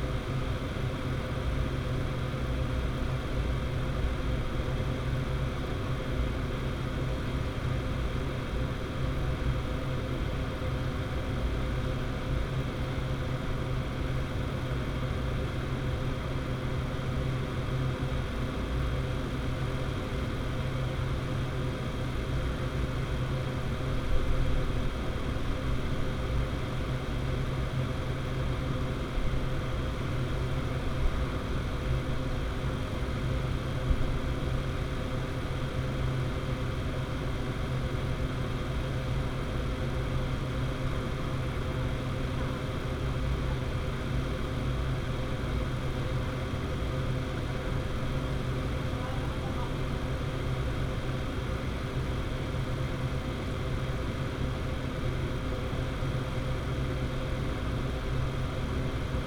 workum, het zool: in front of marina building - the city, the country & me: outside ventilation of marina building
the city, the country & me: august 1, 2012